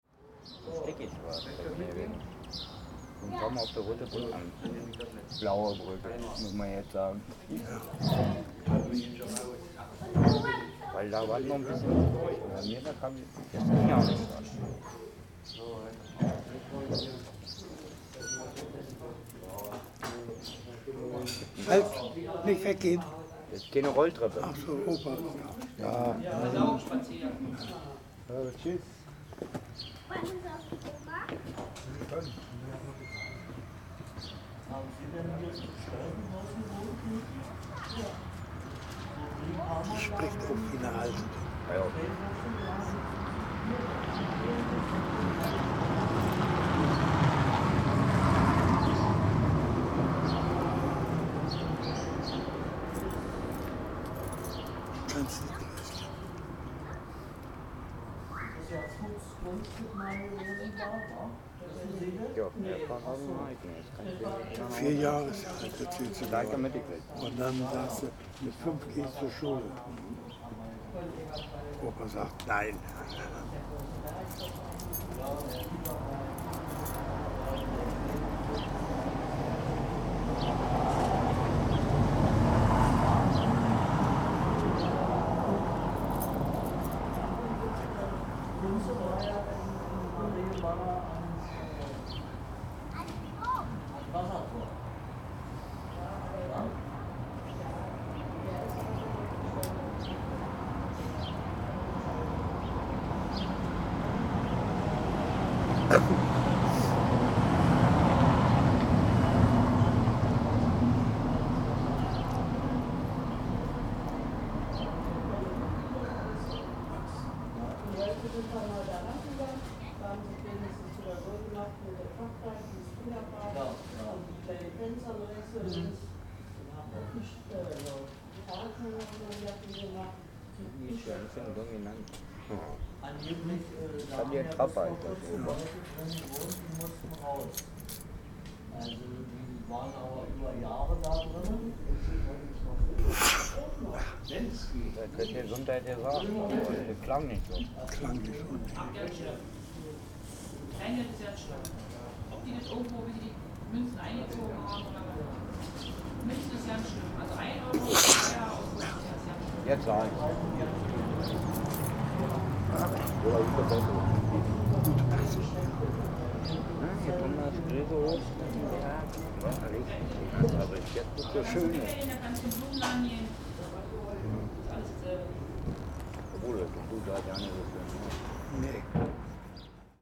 Zerpenschleuse, Zum alten Amt
05.04.2009 12:00 Zerpenschleuse, Durchfahrtsstrasse, Kaffeepause im Gasthof Zum Alten Amt